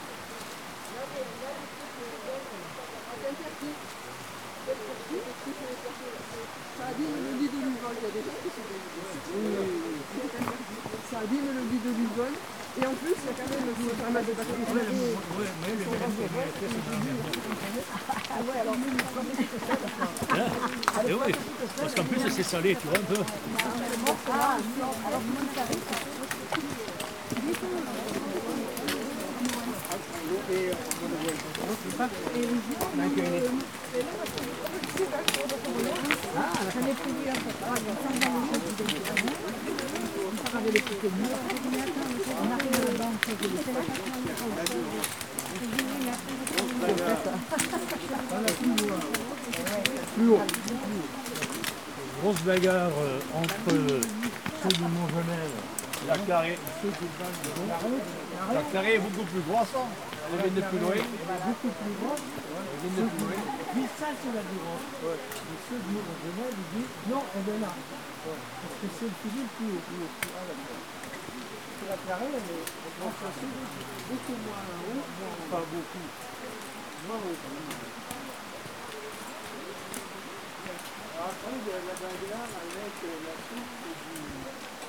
Un groupe de randonneurs remonte l'Huveaune sur la rive droite
A group of hikers go up the Huveaune on the right bank